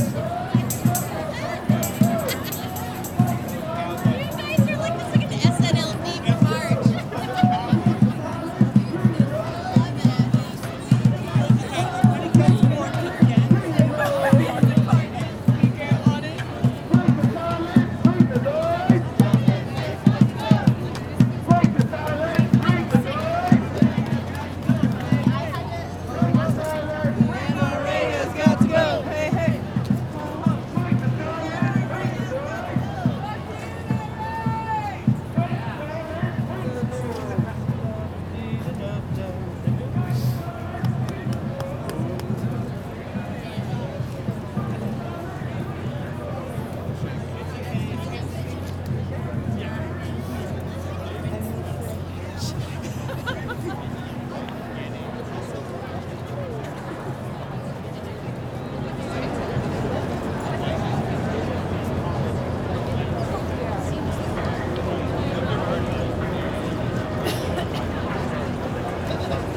Sounds from the protest "March for our Lives" in New York City.
Zoom H6
New York, NY, USA, 24 March 2018